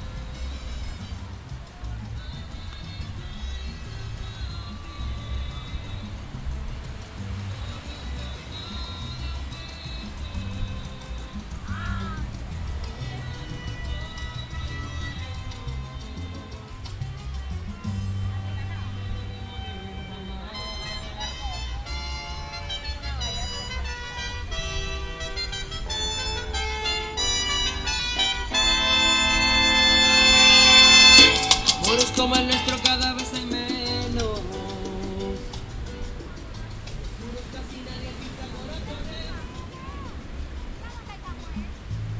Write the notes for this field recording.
A soundwalk around one of Necocli's beaches. The record was taken during the month of December 2014 on a trip around the Urabá region, Colombia.